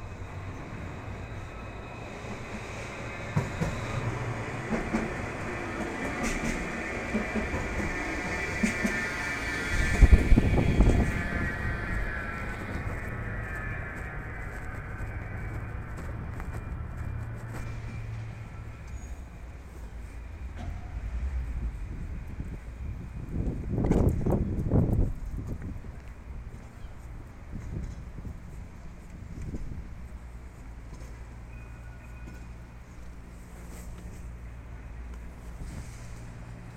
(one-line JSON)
{"title": "Smithfield, Dublin, Ireland", "date": "2011-07-18 12:03:00", "description": "A public square in Dublin, Smithfield, that is supposed to be a quiet space, but is constantly under construction. Teenagers from the are that I have worked with have no memory of this square without the sounds of construction.", "latitude": "53.35", "longitude": "-6.27", "altitude": "21", "timezone": "Europe/Dublin"}